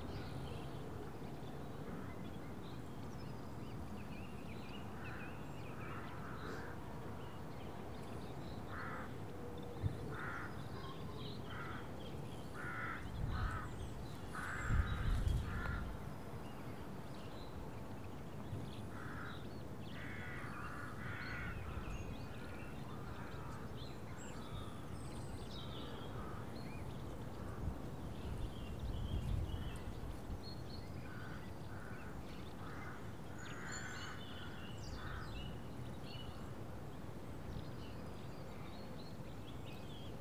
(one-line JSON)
{"title": "Slingsby, North Yorkshire, UK - Morning birds & traffic", "date": "2015-03-08 08:18:00", "description": "Morning birdsong and traffic in a peaceful village. THere's a panorama of birdsong but it's dominated by the crows.\nRecorded on Zoom H4n internal mics.", "latitude": "54.17", "longitude": "-0.93", "altitude": "29", "timezone": "Europe/London"}